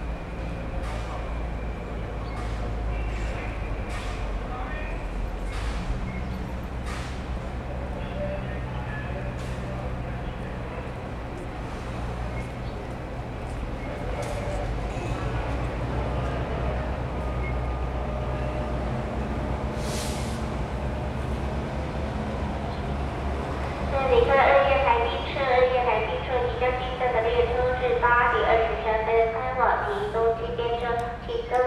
{
  "title": "Kaohsiung Station - Broadcasting",
  "date": "2012-03-03 08:16:00",
  "description": "Station broadcast messages, Sony ECM-MS907, Sony Hi-MD MZ-RH1",
  "latitude": "22.64",
  "longitude": "120.30",
  "altitude": "5",
  "timezone": "Asia/Taipei"
}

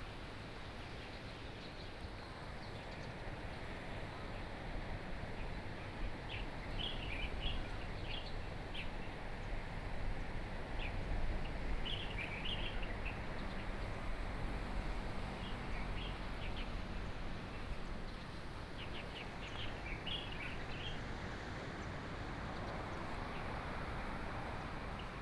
{
  "title": "富山村, Beinan Township - Birdsong and Waves",
  "date": "2014-09-08 07:52:00",
  "description": "Waves, Birdsong\nBinaural recordings\nSony PCM D100+ Soundman OKM II",
  "latitude": "22.84",
  "longitude": "121.19",
  "altitude": "6",
  "timezone": "Asia/Taipei"
}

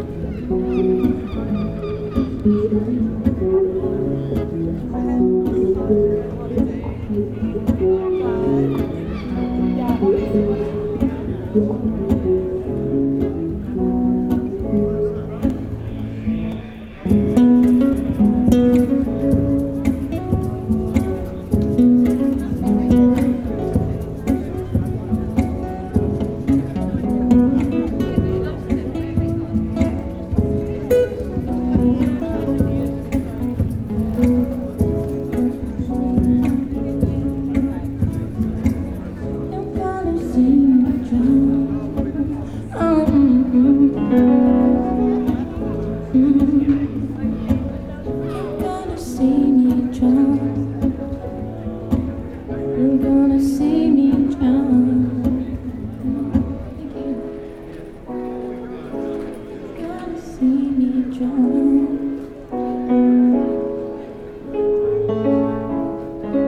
{
  "title": "Girl Busker, Worcester, UK - Girl Busker",
  "date": "2019-08-02 13:41:00",
  "description": "An unknown girl busker on the High Street, a popular spot for street artists. MixPre 6 II 2 x Sennheiser MKH 8020s + Rode NTG3",
  "latitude": "52.19",
  "longitude": "-2.22",
  "altitude": "30",
  "timezone": "Europe/London"
}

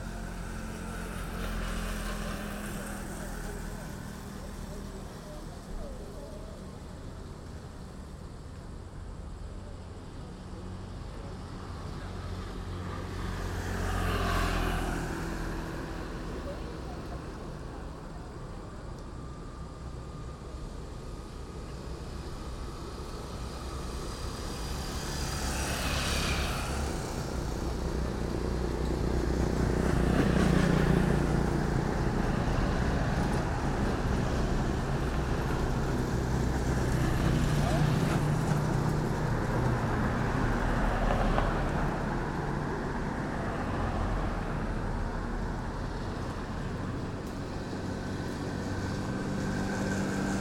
{
  "title": "Baisha, Hainan, China - Heading into Baisha town on a Sunday evening",
  "date": "2017-04-09 20:07:00",
  "description": "Evening on a street corner in Baisha Town, Baisha Li Minority County. Standing opposite a Chinese Dream sign, as people pass by on electric and petrol motorbikes and trikes",
  "latitude": "19.23",
  "longitude": "109.44",
  "altitude": "218",
  "timezone": "Asia/Shanghai"
}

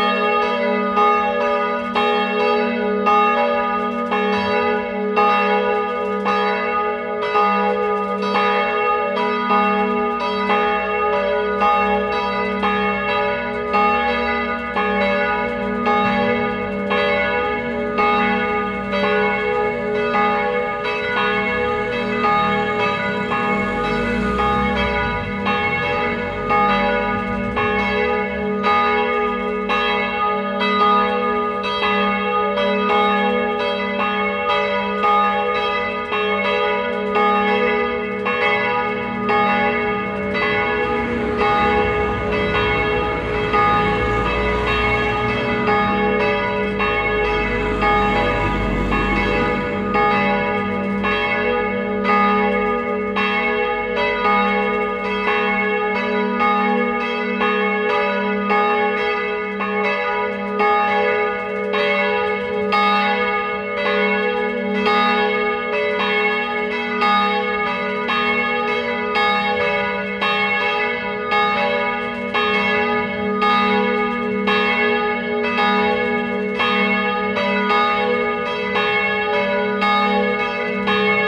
{
  "title": "Huldange, Luxemburg - Huldange, church, bells",
  "date": "2012-08-04 20:00:00",
  "description": "An der Hauptstraße nahe der Kirche. Der Klang der Abendglocken um 20:00 Uhr begleitet vom Straßenverkehr vorbeifahrender Fahrzeuge. Wenn man aufmerkam hinhört, bemerkt man das an- und auschwingen der Glocke im Glockenturm.\nAt the main street nearby the church. The sound of the church bells at 8 p.m.accompanied by the traffic sound of cars passing by. If you listen careful you can hear the swinging of the bell in the bell tower.",
  "latitude": "50.16",
  "longitude": "6.01",
  "altitude": "522",
  "timezone": "Europe/Luxembourg"
}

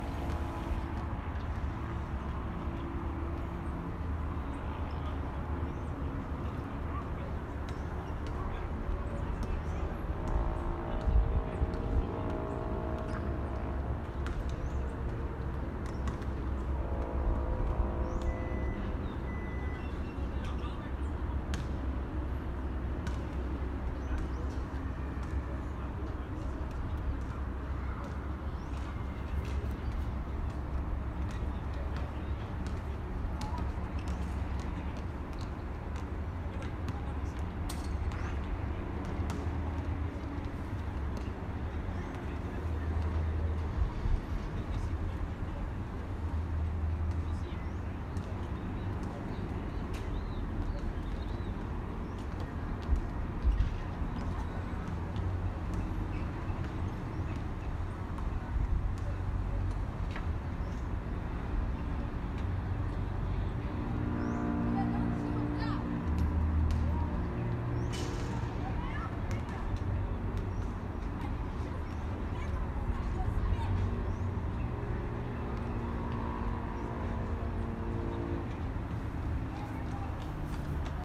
{
  "title": "Avenida Luiz Gonzaga Martins Guimaraes - Jundiaí - Jundiaí Afternoon",
  "date": "2018-07-27 15:03:00",
  "description": "Normal afternoon in Jundiaí with basketball sound in the background",
  "latitude": "-23.19",
  "longitude": "-46.90",
  "altitude": "740",
  "timezone": "America/Sao_Paulo"
}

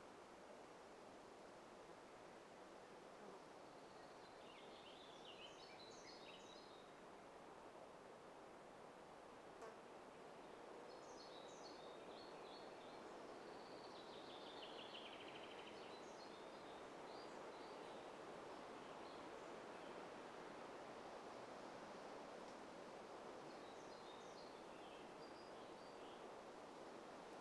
{
  "title": "Lithuania, Stabulankiai, at Stabulankiai holystone",
  "date": "2011-05-24 15:30:00",
  "description": "Some kind of very hidden holy stone in geological reservation/ swamp",
  "latitude": "55.52",
  "longitude": "25.45",
  "altitude": "174",
  "timezone": "Europe/Vilnius"
}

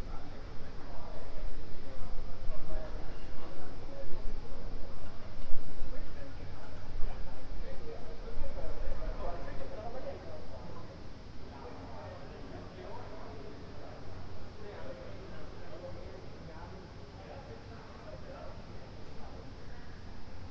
{
  "title": "Ascolto il tuo cuore, città, I listen to your heart, city. Several chapters **SCROLL DOWN FOR ALL RECORDINGS** - \"Sunny January Sunday with students voices in the time of COVID19\": Soundscape",
  "date": "2022-01-30 13:45:00",
  "description": "\"Sunny January Sunday with students voices in the time of COVID19\": Soundscape\nChapter CLXXXVI of Ascolto il tuo cuore, città, I listen to your heart, city.\nSunday, January 30th, 2022. Fixed position on an internal terrace at San Salvario district Turin.\nStart at 1:45 p.m. end at 2:21 p.m. duration of recording 35:56.",
  "latitude": "45.06",
  "longitude": "7.69",
  "altitude": "245",
  "timezone": "Europe/Rome"
}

